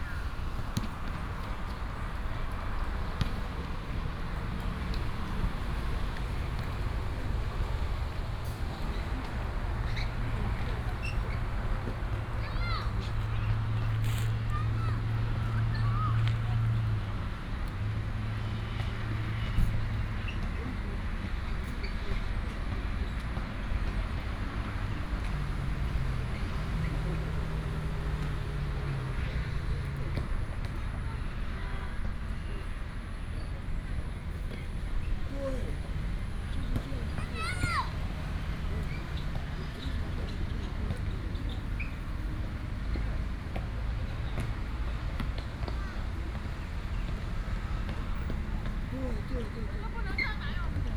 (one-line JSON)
{"title": "宜蘭河濱公園, 宜蘭市 - in the Park", "date": "2014-07-07 17:27:00", "description": "Play basketball, Traffic Sound, Very hot weather", "latitude": "24.76", "longitude": "121.76", "altitude": "8", "timezone": "Asia/Taipei"}